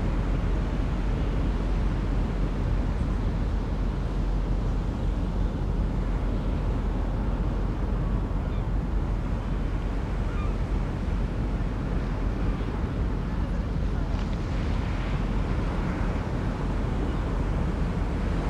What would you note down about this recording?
binaural walk on the beach in Matosinhos